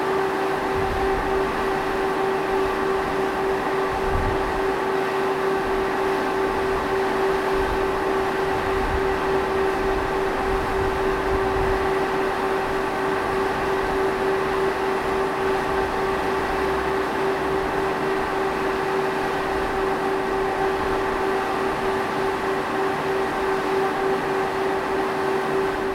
Unitop Optima ul.Struga, Łódź, Polska - Candy Factory - Unitop Optima
sound record on Struga street, behind the fence of factory